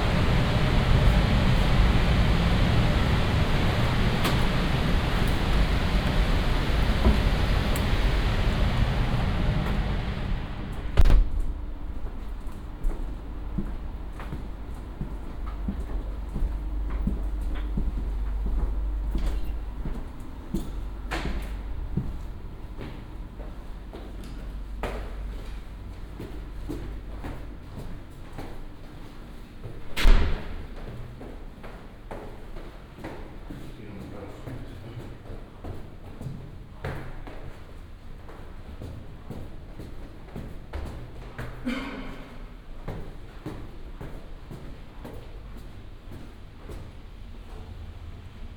düsseldorf, data center - data center, walking
walk from the server area to exit, passing various security zones, including isolating devices and biometric scanners.